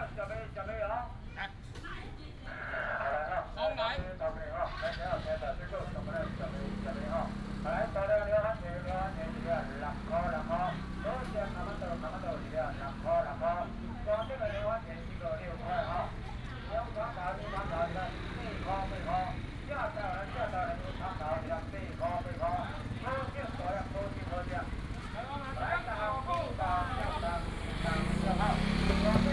Minsheng Rd., Shetou Township - Vegetables and fruit shop
Vegetables and fruit shop, Traffic sound
Shetou Township, Changhua County, Taiwan, 6 April 2017, ~10:00